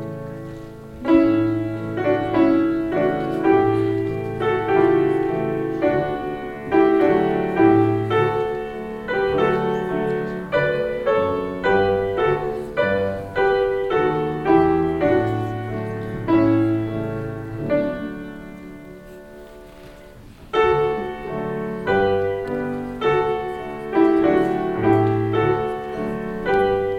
alljährliches klavier vorspiel der Klavierschüler in der schulaula.
soundmap nrw - weihnachts special - der ganz normale wahnsinn
social ambiences/ listen to the people - in & outdoor nearfield recordings
waldorfschule, aula